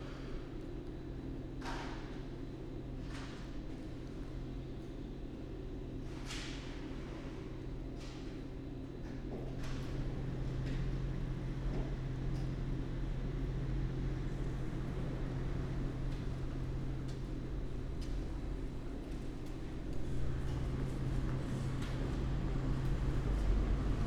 Esch-sur-Alzette, main station, Wednesday morning, entrance hall ambience
(Sony PCM D50, Primo EM172)